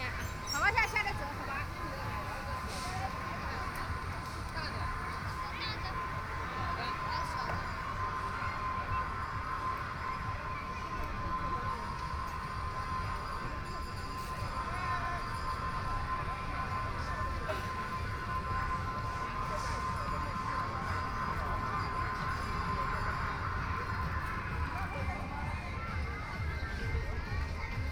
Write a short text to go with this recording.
From the sound of the various play areas, Binaural recording, Zoom H6+ Soundman OKM II